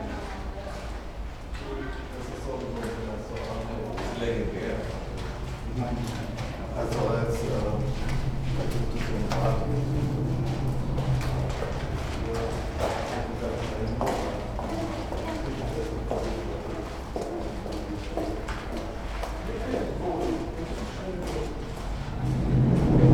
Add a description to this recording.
under the bridge, pedestrians, cyclists, rythmic sound of cars from above